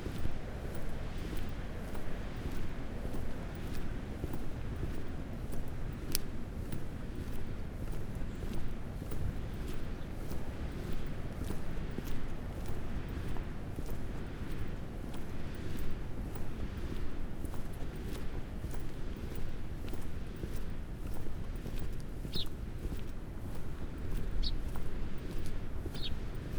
Green Ln, Malton, UK - walking the parabolic on a blustery morning ...
walking the parabolic on a blustery morning ... gently swinging the parabolic in walking home mode ... just catching the wind as it blasted through the hedgerows and trees ... bird calls ... dunnock ... tree sparrow ...